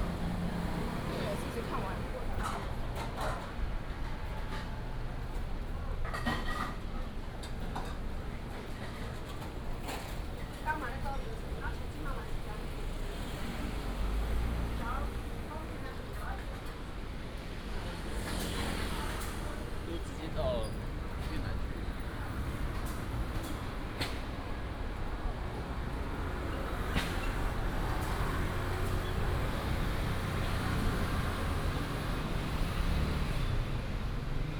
walking in the Street, Traffic noise
Taipei City, Taiwan